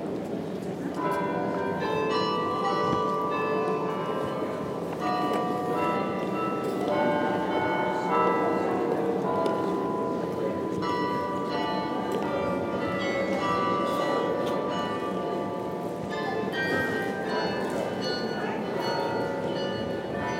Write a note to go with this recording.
Belfort. A flood of tourists near the belfry, whose carillonneur plays with an undeniable talent.